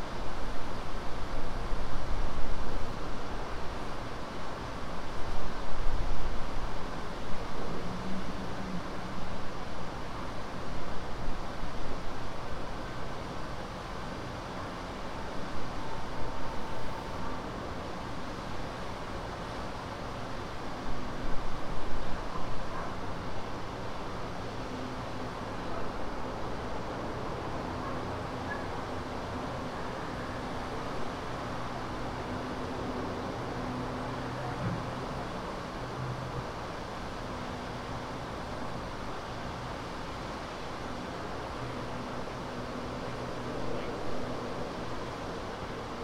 St NE, Atlanta, GA, USA - Saturday afternoon in the city
The sound of Atlanta on a Saturday afternoon, as heard from a patio of a condo. The traffic wasn't particularly heavy, but cars are still heard prominently. At certain points, muffled sounds from the condo behind the recorder bleed into the microphones. It was gusty, so subtle wind sounds can also be heard. Minor processing was applied in post.
[Tascam DR-100mkiii, on-board uni mics & windmuff]
Georgia, United States